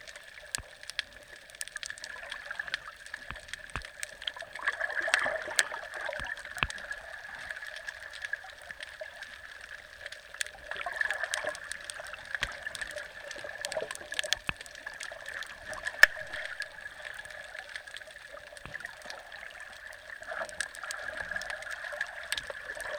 Bundeena, NSW, Australia - (Spring) Inside Bundeena Bay At Night
A similar yet quite different soundscape to the one I had recorded earlier in the day. This one was recorded at high tide with very few people around, the one had midday was recorded at low tide with Bundeena very busy, especially since it is the school holidays at the moment.
Bundeena NSW, Australia, 24 September 2014, ~19:00